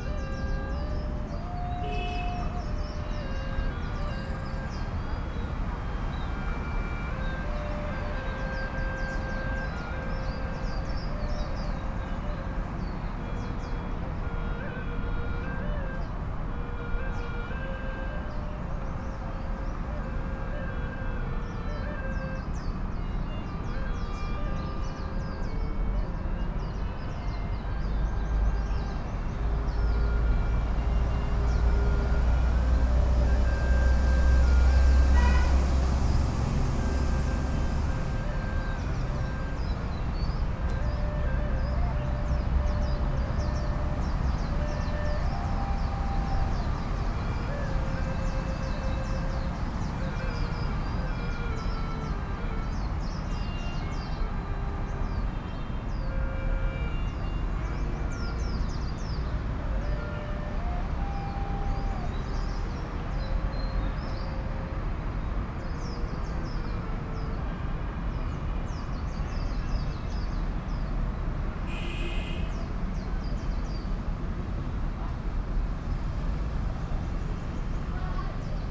Tianya, Sanya, Hainan, China - Traditional flutes in an urban mangrove pavilion
On a pavilion walkway outside a fragment of mangrove forest in Sanya city, an older couple play a traditional wind instrument to notation taped to a wall. Birds sing in the mangroves, and the occasional fish jumps in the river, while traffic rumbles and beeps past according to the traffic lights.
Recorded on Sony PCM-M10 with built-in microphones.